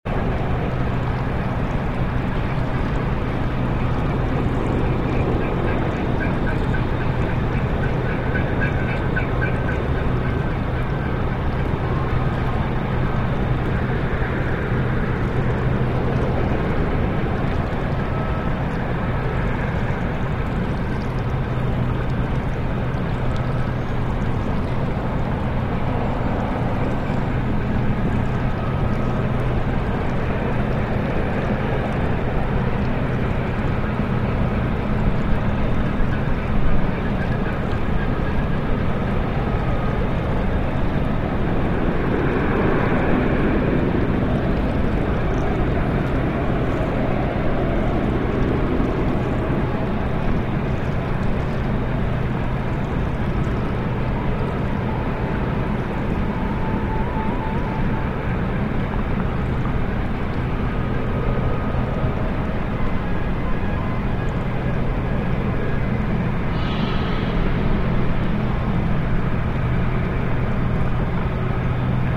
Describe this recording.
Dunkerque, Digue du Braek, opposite the Arcelor coking plant and oxygen steel plant. 2 x Behringer B2 Pro, EMU 1616m.